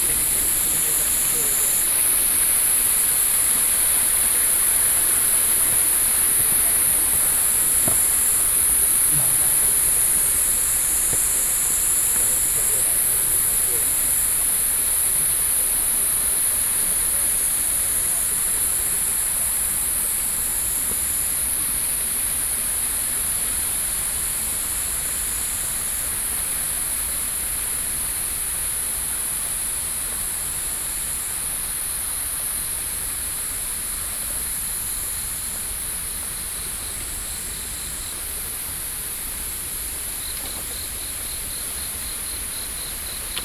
{"title": "Tianmu, Shilin District - Hiking trails", "date": "2012-06-23 08:40:00", "description": "walking in the Hiking trails, Sony PCM D50 + Soundman OKM II", "latitude": "25.13", "longitude": "121.54", "altitude": "246", "timezone": "Asia/Taipei"}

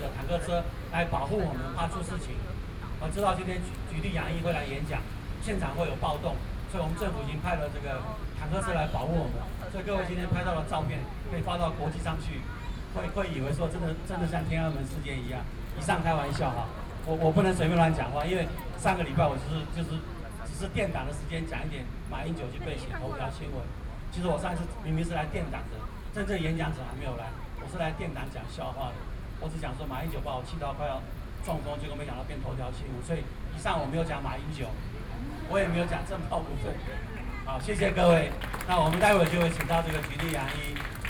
{"title": "Chiang Kai-shek Memorial Hall, Taipei - Band performances", "date": "2013-06-14 18:56:00", "description": "against nuclear power, Band performances, Sony PCM D50 + Soundman OKM II", "latitude": "25.04", "longitude": "121.52", "altitude": "8", "timezone": "Asia/Taipei"}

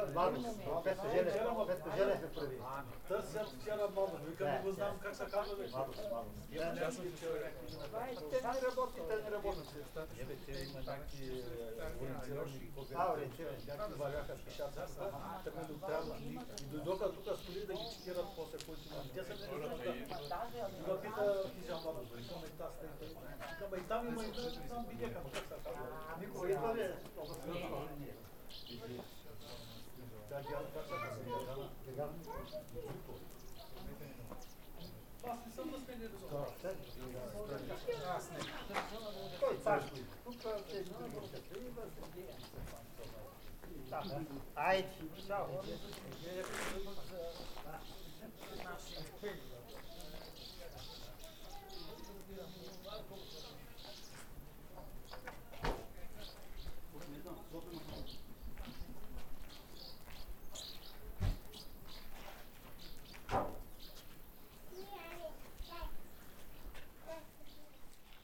{"title": "Buzludzha, Bulgaria, Entrance - In front of Buzludzha", "date": "2019-07-13 12:36:00", "description": "In front of the ruin of the monument of Buzludzha there are swallows to be heard, water drops falling from the construction, voices of visitors and the policeman, who looks that noone enters the building. Two workers who paint a hiking trail pass by and draw their mark on the building.", "latitude": "42.74", "longitude": "25.39", "timezone": "GMT+1"}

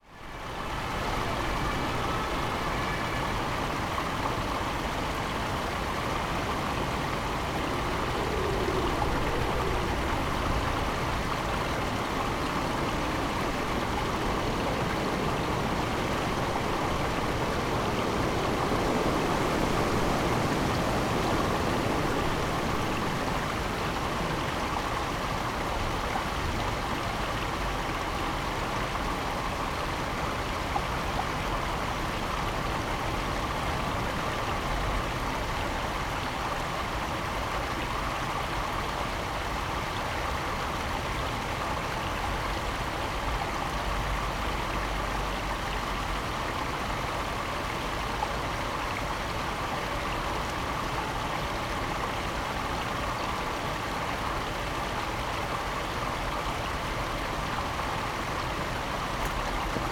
{"title": "Berlin, Görlitzer Park - little artificial creek at bridge", "date": "2011-04-08 19:45:00", "description": "little artificial creek in Görlitzer Park Berlin, near small bridge", "latitude": "52.50", "longitude": "13.44", "altitude": "39", "timezone": "Europe/Berlin"}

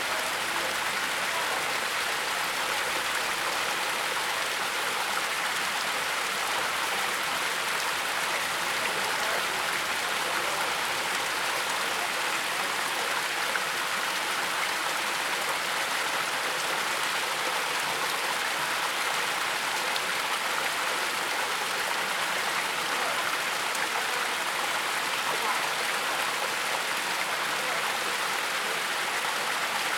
{"title": "L'Aquila, Fontana delle 99 Canelle - 2017-05-22 07-99 Cannelle", "date": "2017-05-22 15:00:00", "latitude": "42.35", "longitude": "13.39", "altitude": "632", "timezone": "Europe/Rome"}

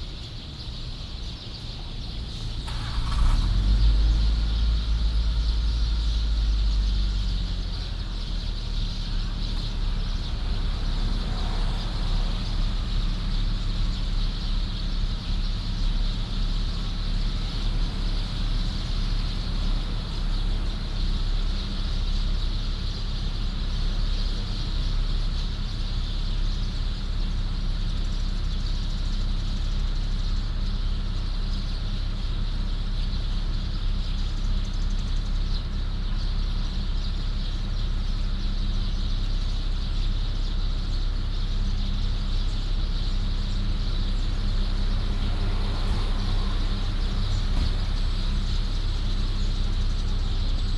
{"title": "雙十人行廣場, Banqiao Dist., New Taipei City - Sparrow", "date": "2015-09-23 17:51:00", "description": "Very many sparrows, Traffic Sound", "latitude": "25.03", "longitude": "121.47", "altitude": "17", "timezone": "Asia/Taipei"}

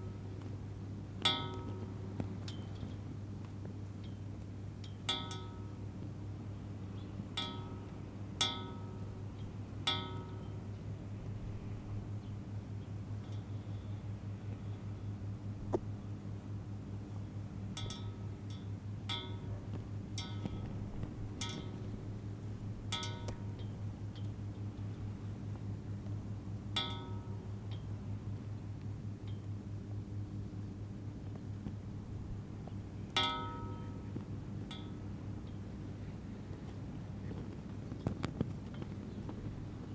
{"title": "Cerro Sombrero, Primavera, Magallanes y la Antártica Chilena, Chile - storm log - cerro sombrero flagpole", "date": "2021-02-15 12:52:00", "description": "flagpole - wind SW 24 km/h, ZOOM F1, XYH-6 cap\nCerro Sombrero was founded in 1958 as a residential and services centre for the national Petroleum Company (ENAP) in Tierra del Fuego.", "latitude": "-52.78", "longitude": "-69.29", "altitude": "65", "timezone": "America/Punta_Arenas"}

